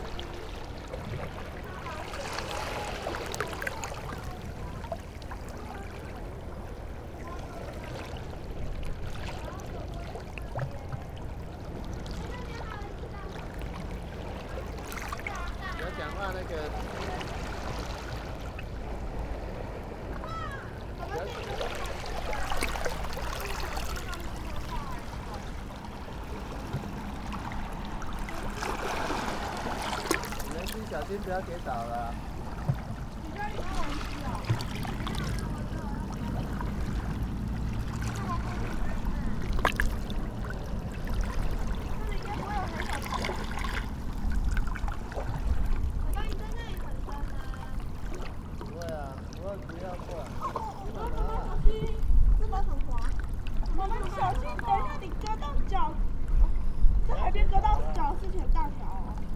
Ruifang, New Taipei City - Beach playing in the water
Ruifang District, New Taipei City, Taiwan, 12 July 2012